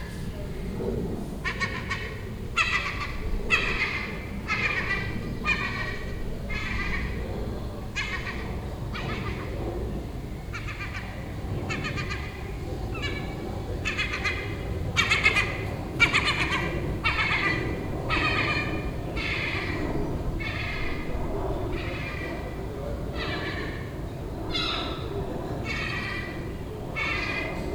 Birds, thunder and bells The Hague. - Seagull Chatter
This seagull had a nest on the roof next door and it was making these sounds all day for a week.
Binaural recording.
The Hague, Netherlands